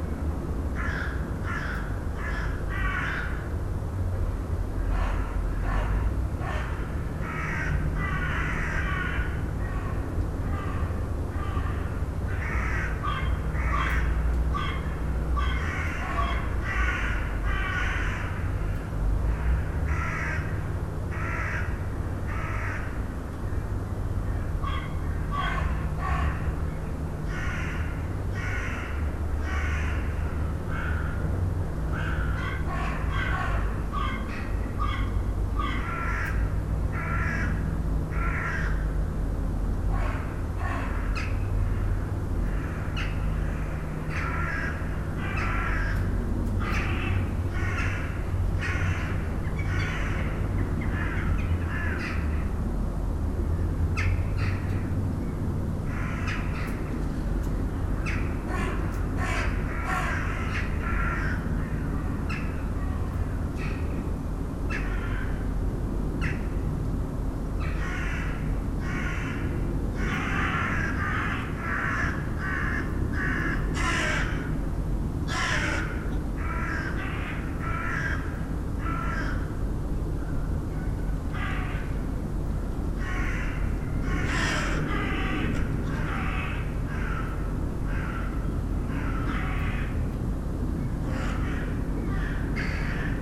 In the very old power plant of the Seraing coke plant, recorder left alone at the window, with crows discussing and far noises of the Shanks factory (located west). This power plant is collapsed and abandoned since a very long time. Crows like this kind of quiet place.
Seraing, Belgium